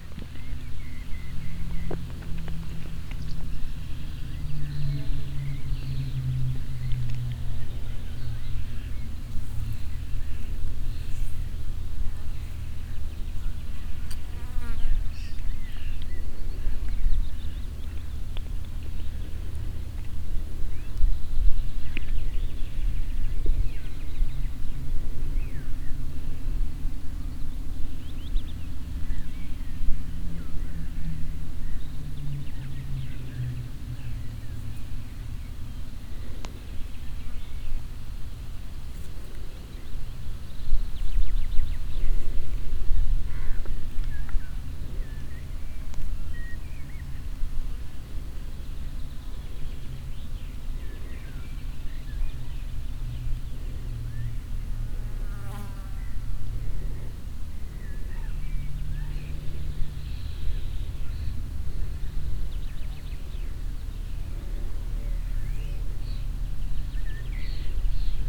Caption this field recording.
taking a break during a bike trip on a field road leading to the back of the municipal landfill. very mellow, hot afternoon. rural area ambience. having a snack do some sounds of chewing can be heard. three bikers swooshing by.